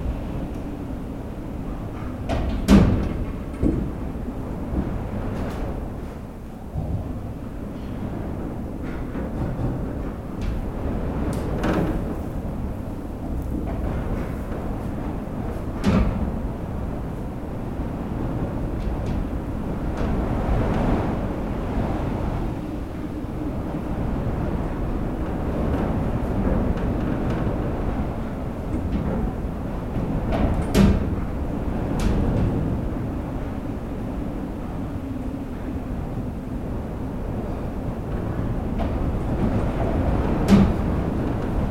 Campestre-et-Luc, France - Tempest
Outside, this is a terrible tempest, with horrible cold wind. This tempest is recorded inside a stable, wind try to destroy the rooftop. Weather was so bad that this inhabitant gave me hospitality in this barn. Strong memory...